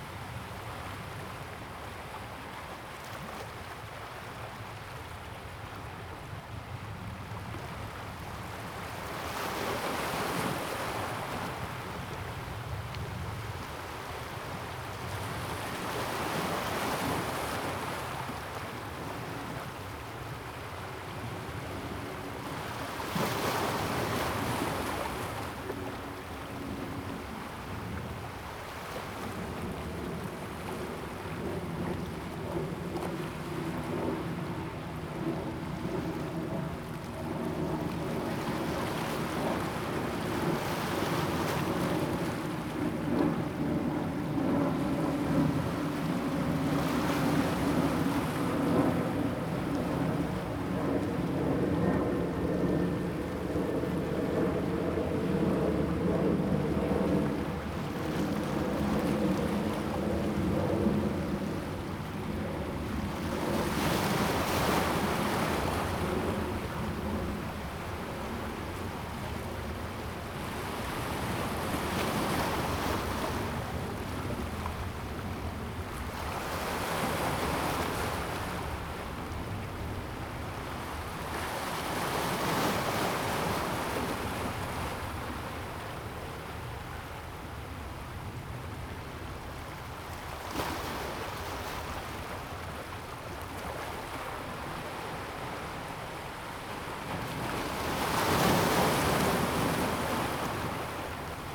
大屯溪, New Taipei City, Taiwan - In the river and the waves interchange
Sound of the waves, Stream, In the river and the waves interchange
Zoom H2n MS+XY
New Taipei City, Tamsui District